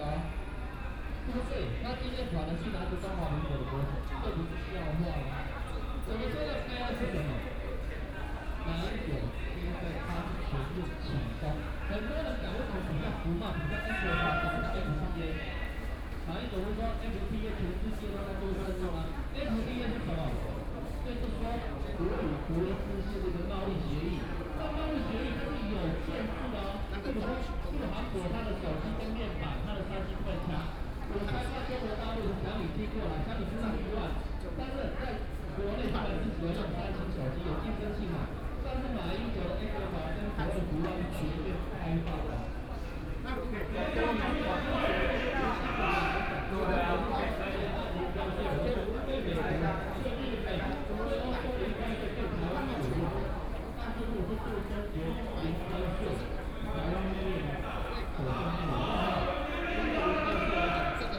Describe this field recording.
Student movement scene, Different groups sit in the road, Their discussion on the topic and to share views on the protest